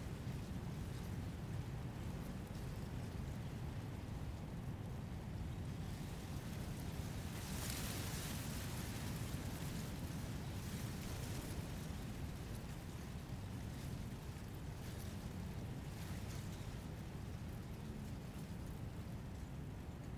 St. Ninian's Isle, Shetland Islands, UK - The wind in the rushes on St. Ninian's Isle
This is the sound of the wind passing through rushes on top of St. Ninian's Isle. I was there walking with my friends Lisa and Kait, when I espied a big clump of rushes and instantly wanted to hear what it sounded like up close. I rushed over and buried my Naiant X-X omni-directionals deep into the leaves, then sat back and listened with my own ears to the lovely textures of those plants being stirred by the wind. It's hard to record the wind directly, but I like how you can hear it indirectly here, in the shuffle and abrading of thick green leaves moving together under its force. I love how the gusts - the swell and decay of the wind - are also somehow evident here in the way it is teasing the rushes. You can also hear in the recording some small drifts of conversation - more a sound than individual words - from Kait and Lisa, who were sat further up the hill from me, waiting for me. It was a beautiful Sunday evening, and there were sheep all around us.